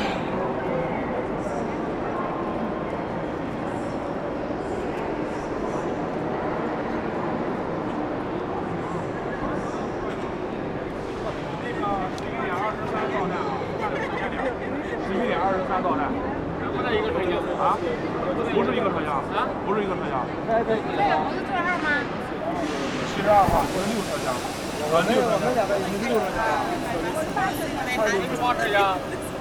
Boulevard de la Chapelle, Paris, France - Metro and trains
On the morning, somebody is cleaning the street. Metro and trains are passing by with big urban noises. At the end, walking by the street, I go inside the Paris gare du Nord station.